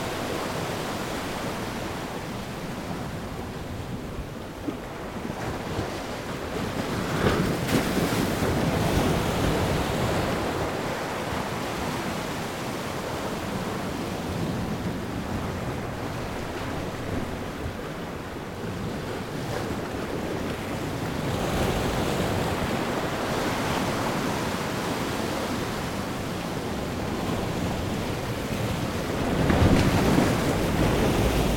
Île Renote, Trégastel, France - Waves crushing on a rock - from the side [Ile Renote ]
Marée montante. les vagues viennent s'écraser contre le flanc d'un rocher. Prise de son depuis le coté.
Rising tide. the waves crash against a rock. Heard from the side.
April 2019.